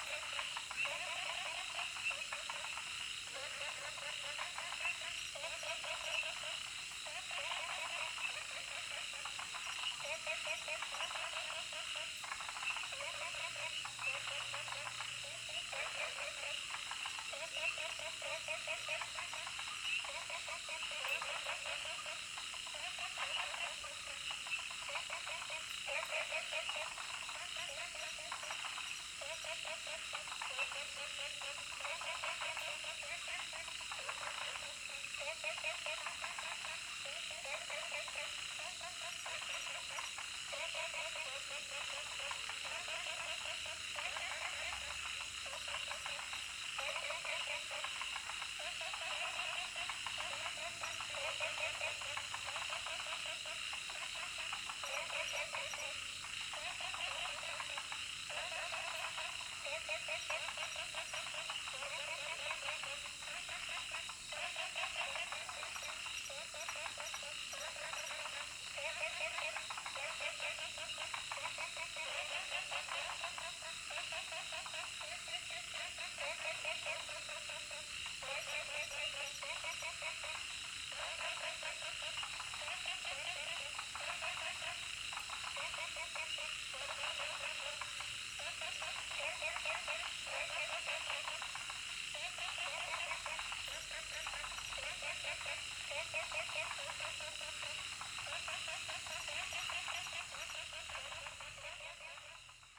Frogs chirping, Insects called
Zoom H2n MS+XY
Puli Township, 水上巷28號, 7 June, 19:15